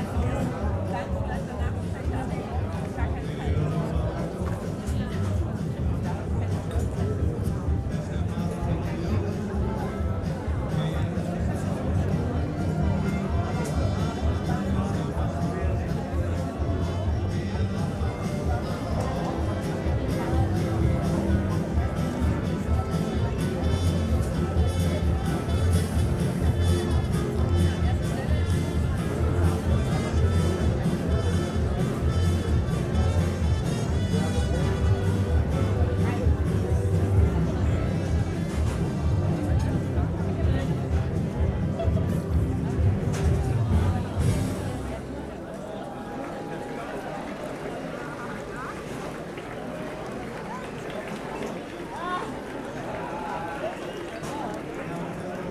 berlin, john-foster-dulles-allee: haus der kulturen der welt, terrasse - the city, the country & me: terrace of house of the cultures of the world
at the terrace during a concert of giant sand at wassermusik festival
the city, the country & me: august 5, 2011
Berlin, Germany, 5 August, 21:10